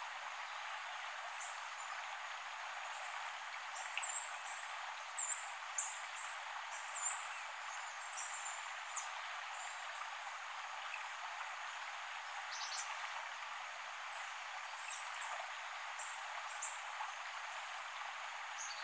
Antietam Creek at Antietam Battlefield, Sharpsburg, MD, USA - The Battle of Antietam
A recording taken on the banks of Antietam Creek just south of the final battle of Antietam.
18 September 2016, 19:00